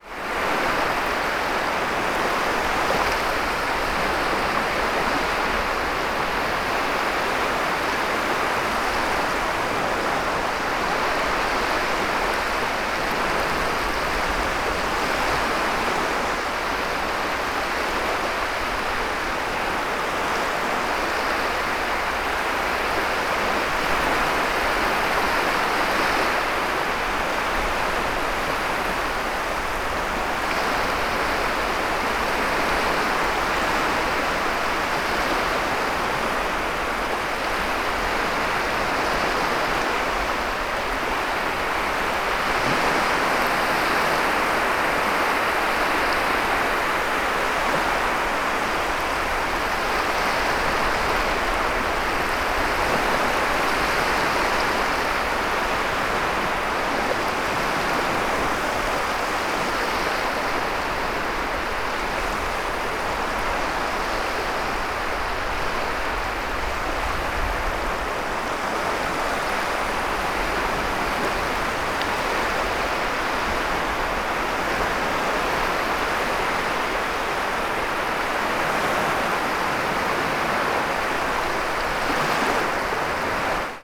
Frederiksvej, Nexø, Denmark - Small waves
Small waves, from sandy beach
Petites vagues, plage de sable